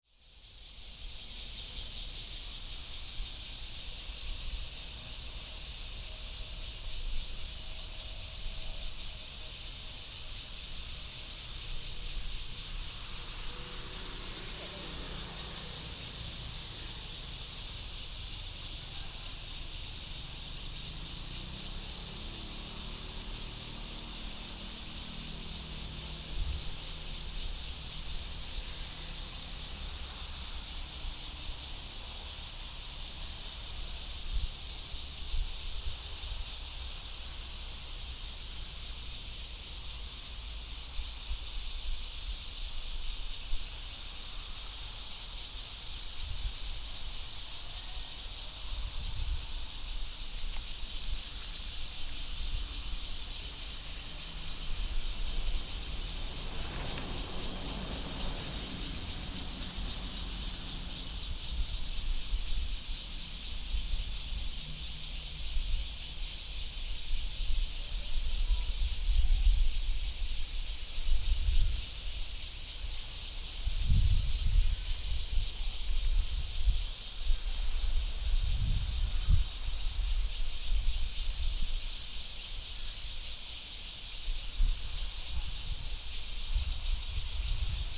Ziqiang Rd., Guanshan Township - Cicadas sound
Traffic Sound, Cicadas sound, Hot weather, small Town
Zoom H2n MS +XY